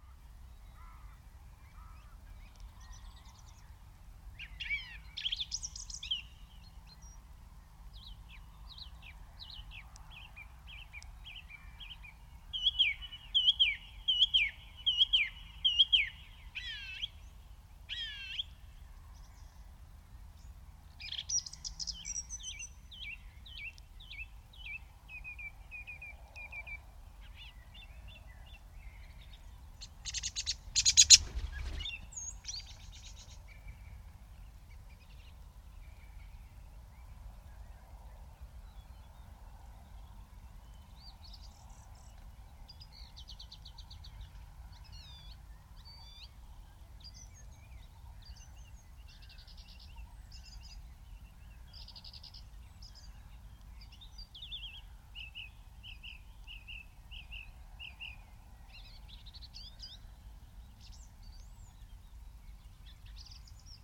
Streaming from a hedgerow in large intensively farmed fields near Halesworth, UK - Dusk songthrushes sing and fly very close in the falling light
These fields are huge and farmed industrially. A few hedgerows remain and are home for more birds than I expected. A song thrush sings loudly from the one tall tree but takes to the wing to chase off a rival. The birds' flight and fluttering movement ruffling close to the microphones on occasion - a quick but intense encounter. Wood pigeons call, crows, pheasants and skylarks are the background. An early owl hoots in the far distance. Someone is shooting - no idea at what. Shots and bird scarers are a constant in rural Suffolk. Given the agri-chemical onslaught on these fields I guess the, at times, war zone soundscape is fitting.
England, United Kingdom, 26 May